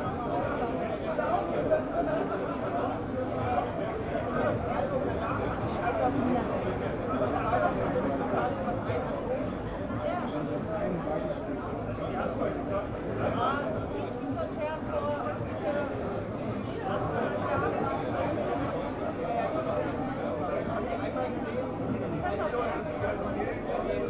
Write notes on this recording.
the city, the country & me: may 29, 2011